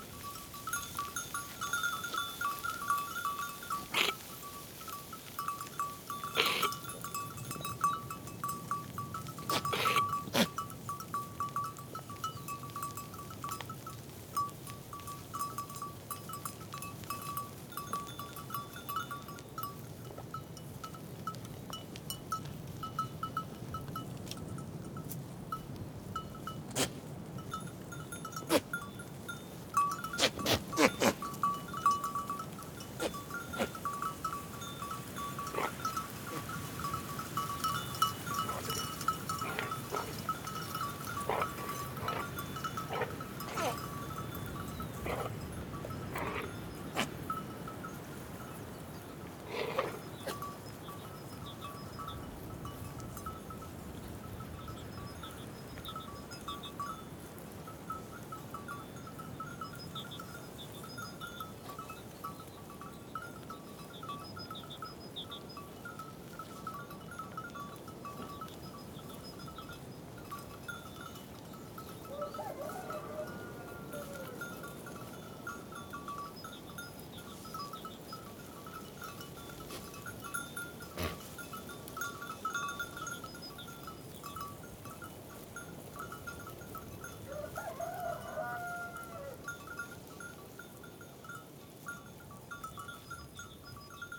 Grizac, Pont-de-Montvert-Sud-Mont-Lozère, France - Chèvres de Philippe et Julien, Grizac . Lozère
Goats grazing in the meadows. Sometimes they are afraid and move in herds.
sound Device Mix Pre6II + Cinela Albert TRI DPA4022.
6 April 2021, 16:03